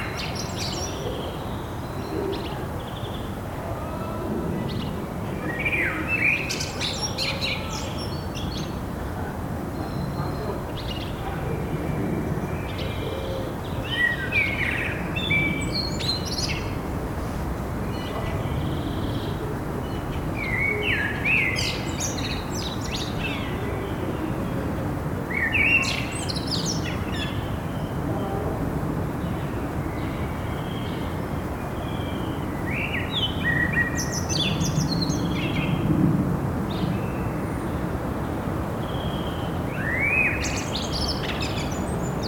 Av. Camille Flammarion, Toulouse, France - Jolimont 02
ambience Parc 02
Captation ZoomH4n
France métropolitaine, France